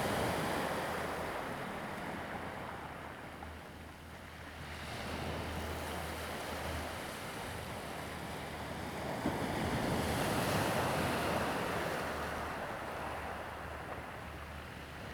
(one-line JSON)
{"title": "Ponso no Tao, Taiwan - Sound of the waves", "date": "2014-10-28 16:20:00", "description": "In the beach, Sound of the waves\nZoom H2n MS +XY", "latitude": "22.05", "longitude": "121.51", "altitude": "11", "timezone": "Asia/Taipei"}